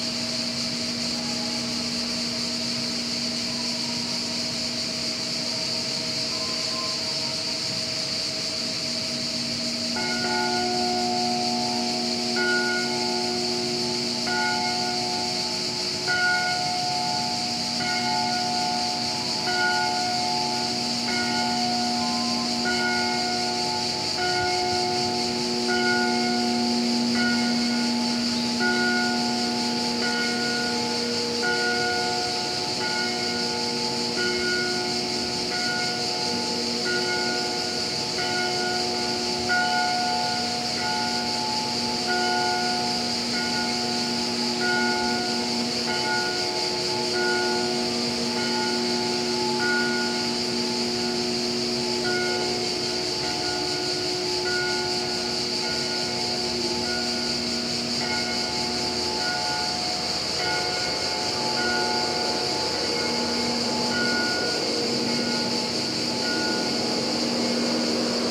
Saintes-Maries-de-la-Mer, Frankreich - Château d'Avignon en Camargue - Ambience 'Le domaine des murmures # 1'
Château d'Avignon en Camargue - Ambience 'Le domaine des murmures # 1'.
From July, 19th, to Octobre, 19th in 2014, there is a pretty fine sound art exhibiton at the Château d'Avignon en Camargue. Titled 'Le domaine des murmures # 1', several site-specific sound works turn the parc and some of the outbuildings into a pulsating soundscape. Visitors are invited to explore the works of twelve different artists.
In this particular recording, you will notice sounds from different works by Julien Clauss, Emma Dusong, Arno Fabre, and Franck Lesbros, the drone of the water pump from the nearby machine hall as well as the sonic contributions of several unidentified crickets, and, last but not least, the total absence of sound from an installation by Emmanuel Lagarrigue in the machine hall.
[Hi-MD-recorder Sony MZ-NH900, Beyerdynamic MCE 82]
14 August 2014, ~14:00